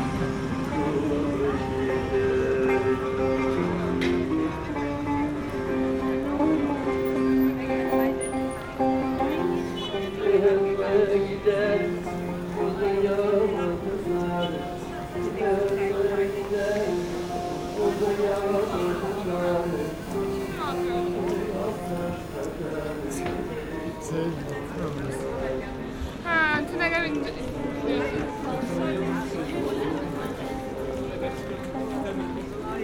Old blind musician on Istiklal, Istanbul
street life on Istiklal near Tünel. An old blind musician sings here. His son hold the microphone for him.
26 February, 18:04